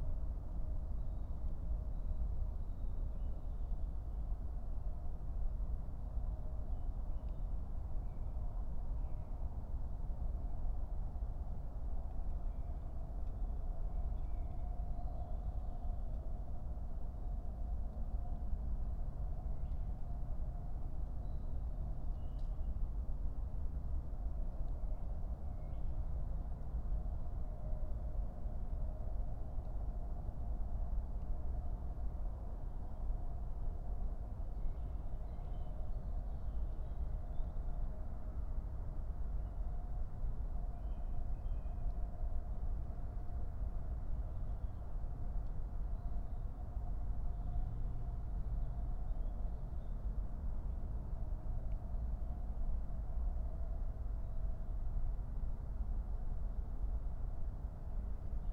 05:00 Berlin, Königsheide, Teich - pond ambience